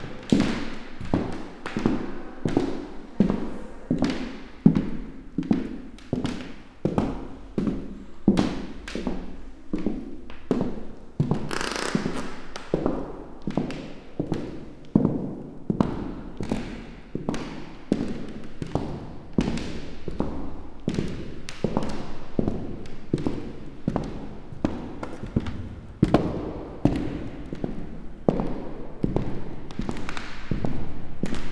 Muzej norosti, Museum des Wahnsinns, Trate, Slovenia - with clogs, walking the rooms
listening to the rooms, echoing them with clogs, just a decade ago madhouse was here, now castle is empty most of the time, silently waiting for the future, listening to lost voices ...
Zgornja Velka, Slovenia, June 2015